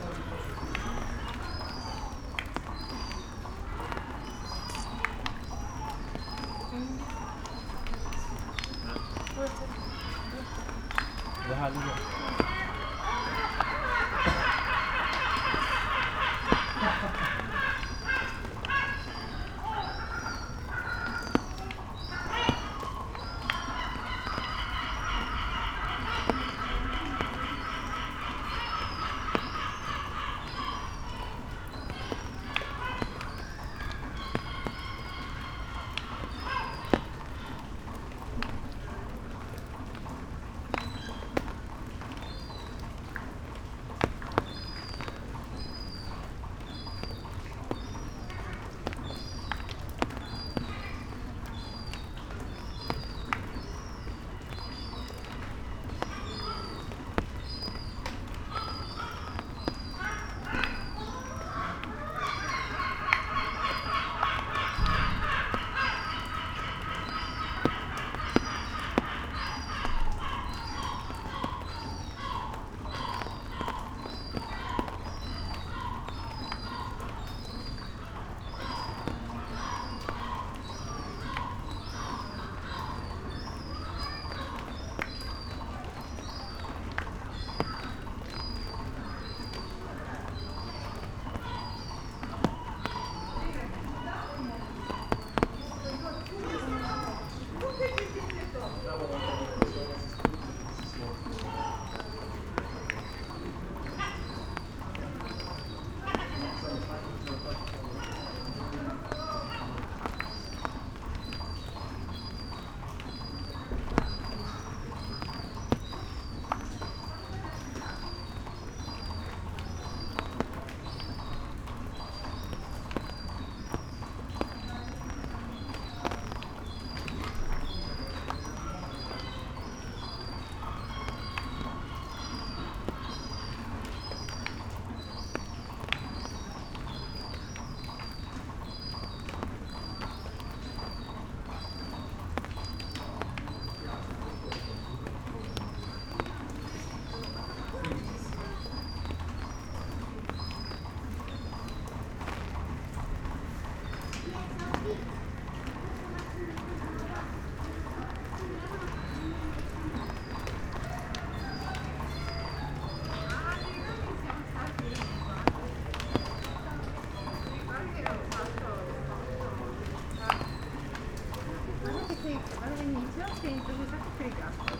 cats giardino, poreč, croatia - rain, umbrella, seagulls
rainy day, sleeping cats all over beautiful garden ...